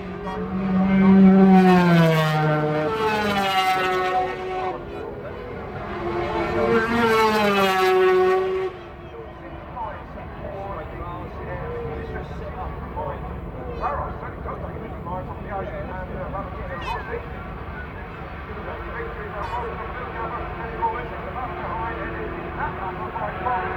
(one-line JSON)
{"title": "Castle Donington, UK - British Motorcycle Grand Prix 2001 ...", "date": "2001-07-08 13:00:00", "description": "500cc motorcycle race ... part one ... Starkeys ... Donington Park ... the race and all associated noise ... Sony ECM 959 one point stereo mic to Sony Minidisk ...", "latitude": "52.83", "longitude": "-1.37", "altitude": "81", "timezone": "Europe/London"}